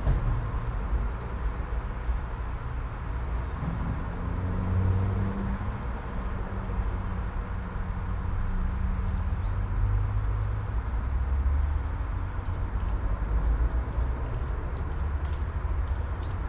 all the mornings of the ... - feb 11 2013 mon
Maribor, Slovenia, 11 February 2013, 08:33